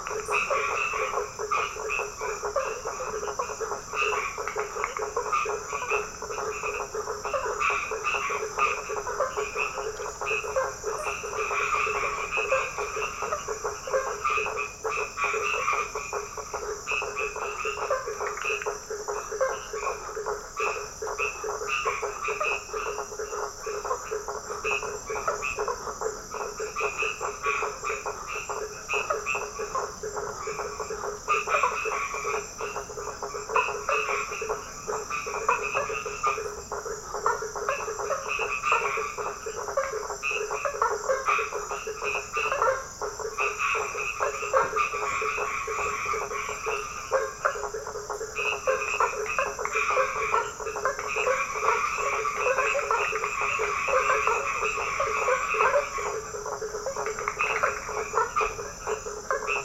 Behind the church, in the small village of Praia do Sono, toads are singing. Beach in background very far away...
Recorded by a MS Setup Schoeps CCM41+CCM8 in a Zephyx Windscreen by Cinela
Recorder Sound Devices 633
Sound Reference: BRA170219T10
19 February, 23:00